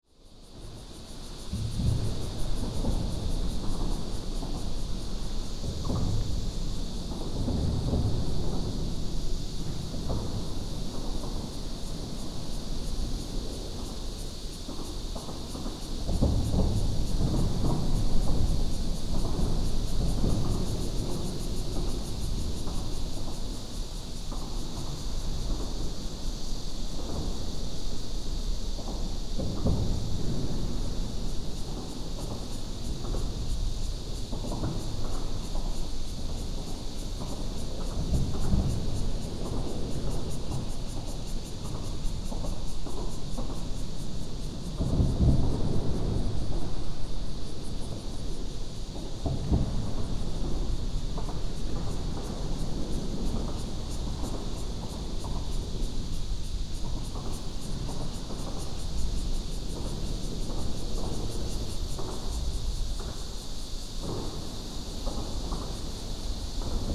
Ln., Sec., Minquan Rd., Zhongli Dist. - Under the highway
Under the highway, Cicada cry, Traffic sound